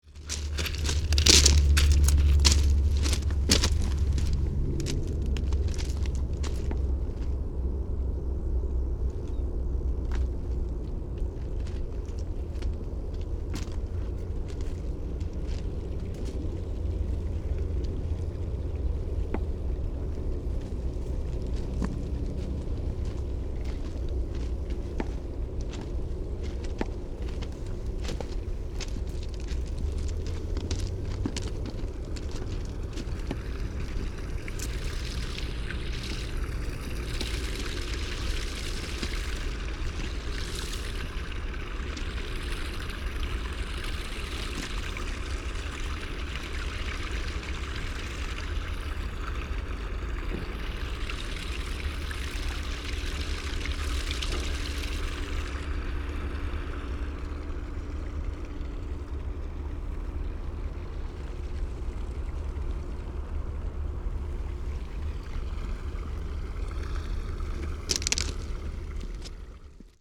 Railway line, Staten Island
footsteps, power line crackle, water